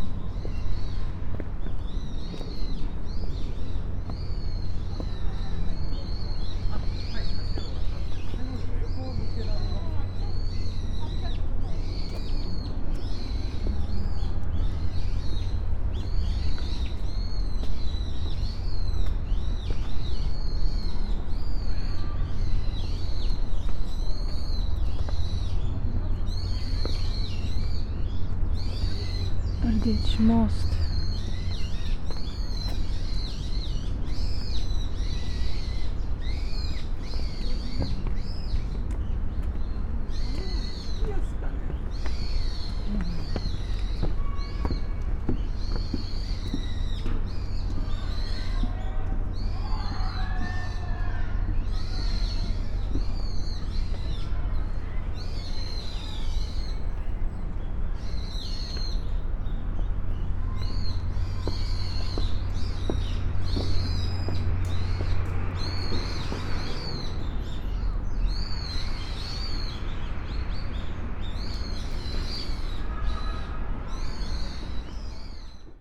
{"title": "koishikawa korakuen gardens, tokyo - stones, marsh, red bridge", "date": "2013-11-13 16:03:00", "description": "crossing the marsh with stepping on the stones and walking over the red bridge, birds ... gardens sonority", "latitude": "35.71", "longitude": "139.75", "altitude": "17", "timezone": "Asia/Tokyo"}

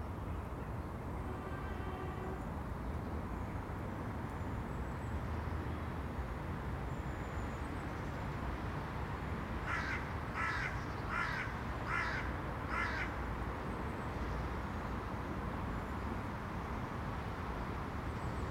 {"title": "Contención Island Day 25 outer northeast - Walking to the sounds of Contención Island Day 25 Friday January 29th", "date": "2021-01-29 10:49:00", "description": "The Poplars Roseworth Avenue The Grove Church Avenue Church Road\nIn the graveyard\nheadstones tumbled down\nA metro passes\njackdaws call\nBehind me\nsomewhere inside a yew tree\na pigeon coos", "latitude": "55.01", "longitude": "-1.61", "altitude": "62", "timezone": "Europe/London"}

